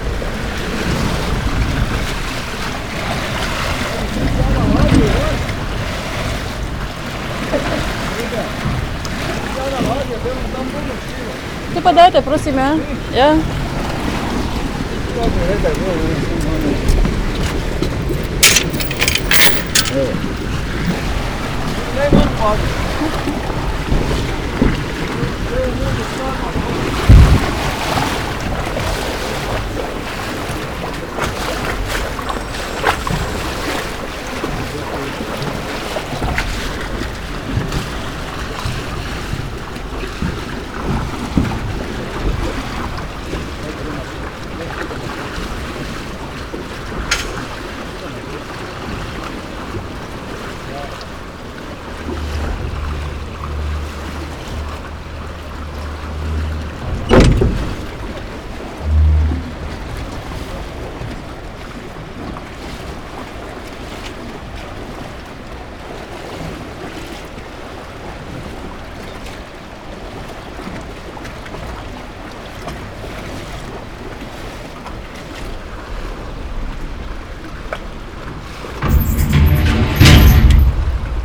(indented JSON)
{
  "title": "river Mura, near Sladki Vrh, Slovenija - crossing the river, crossing the border",
  "date": "2015-06-03 19:10:00",
  "description": "crossing the river Mura with wooden raft, which is attached to the metal rope, raft moves with flow of the river",
  "latitude": "46.70",
  "longitude": "15.72",
  "altitude": "239",
  "timezone": "Europe/Ljubljana"
}